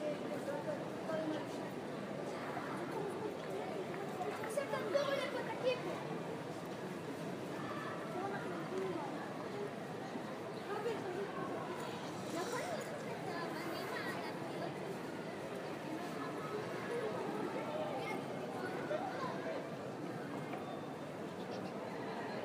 The Western wall. Where "the divine presence is always present"
22 October 2013